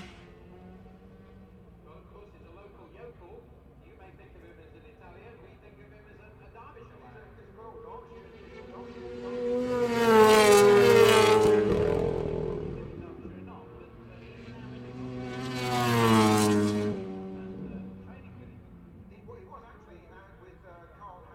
Castle Donington, UK - British Motorcycle Grand Prix 2003 ... moto grandprix ...
British Motorcycle Grand Prix 2003 ... Qualifying part two ... 990s and two strokes ... one point mic to minidisk ...